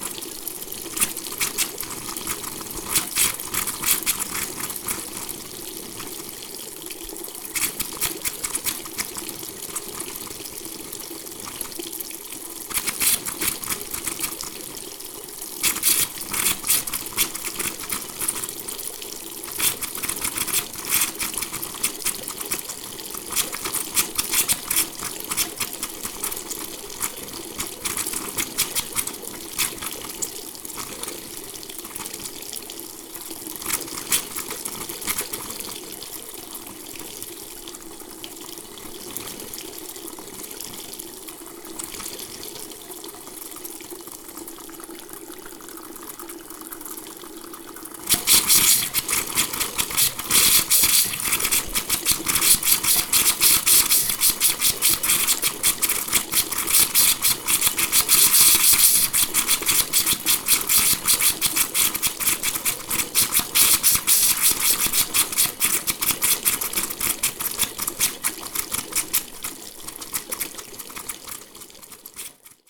poznan, windy hill district, bathroom - hissing faucet - water back
the pipe if fixed, water is flowing again but the faucet is still grumpy, won't let the water flow, violently hisses with air